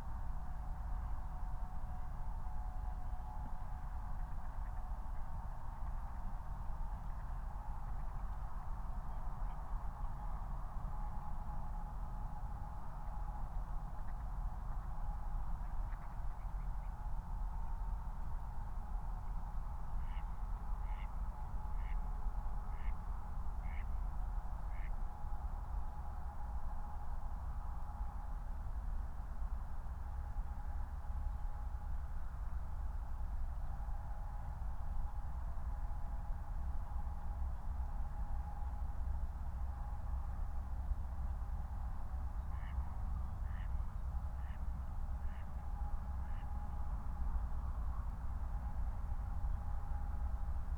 Moorlinse, Berlin Buch - near the pond, ambience
04:19 Moorlinse, Berlin Buch
23 December 2020, ~04:00